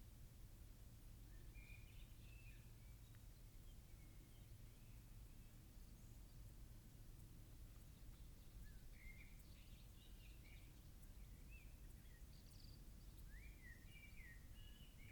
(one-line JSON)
{"title": "Zakynthos, Řecko - birds calls", "date": "2015-06-11 16:01:00", "description": "Birds call late afternoon under the former quarry.", "latitude": "37.74", "longitude": "20.93", "altitude": "240", "timezone": "Europe/Athens"}